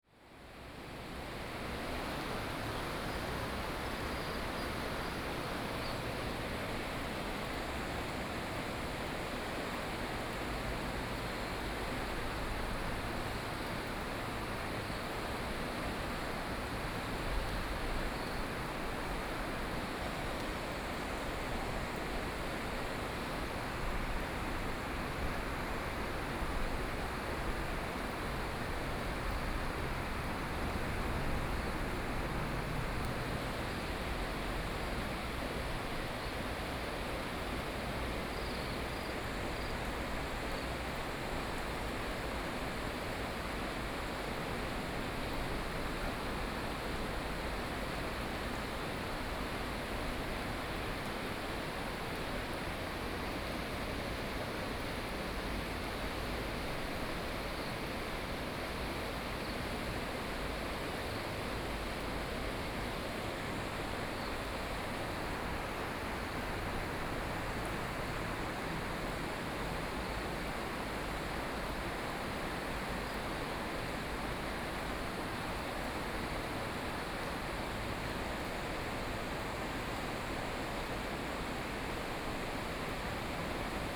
安農溪, 三星鄉大隱村 - Under the bridge
Sound streams, Under the bridge, Small village, Traffic Sound
Sony PCM D50+ Soundman OKM II